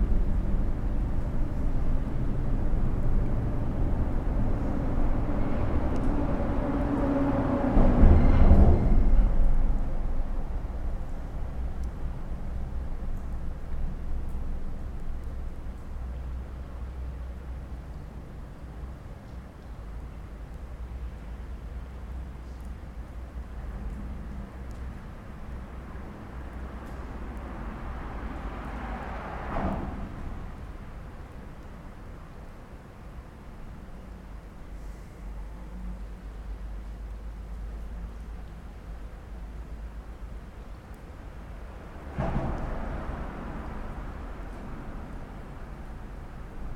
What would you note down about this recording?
sitting under the Torun bridge listening to the trucks passing above